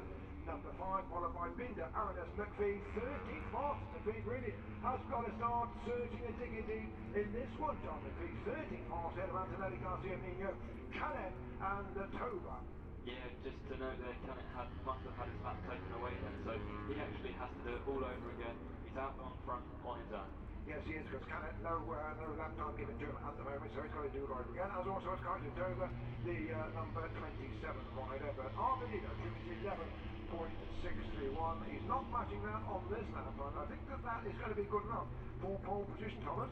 Silverstone Circuit, Towcester, UK - british motorcycle grand prix 2019 ... moto three ... q2 ...
british motorcycle grand prix ... moto three ... qualifying two ... and commentary ... copse corner ... lavalier mics clipped to sandwich box ...
East Midlands, England, UK, 24 August 2019